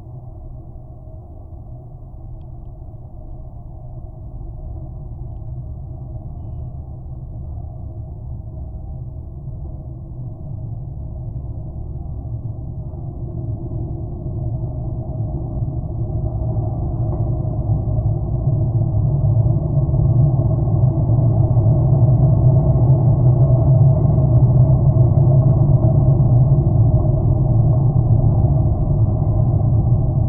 Erasmusbrug, Rotterdam, Netherlands - Erasmusbrug
Recorded with LOM contact mics. Traffic jam makes the bridge vibrate constantly producing low frequencies and harmonics.
2 August 2021, ~13:00